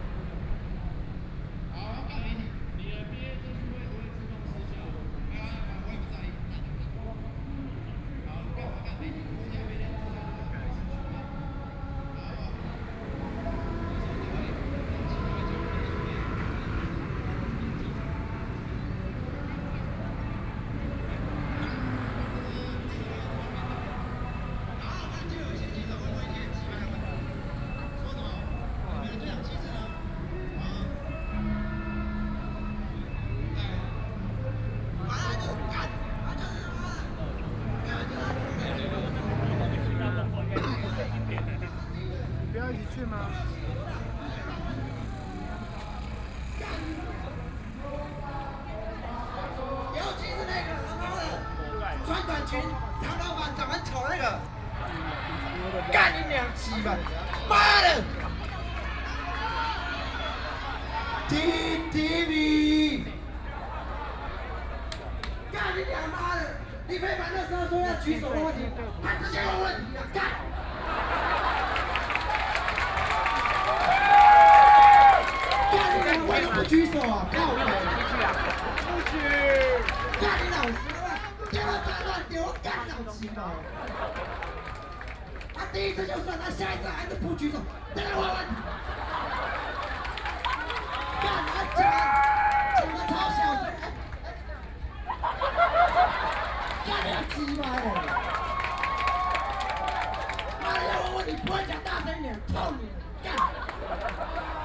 People and students occupied the Legislature Yuan, The night before the end of the student movement, A lot of students and people gathered in front of the Plaza, Post to complain against the student movement during, Very special thing is to require the use of such profanity as the content
9 April 2014, 22:41